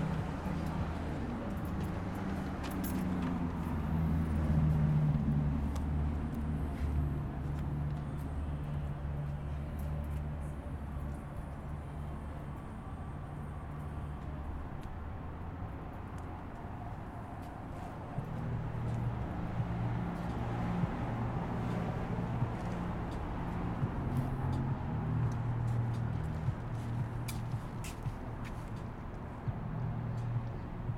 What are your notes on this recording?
Outside of Rendezvous Bar, pedestrians walking and faint music can be heard. Recorded with ZOOM H4N Pro with a dead cat.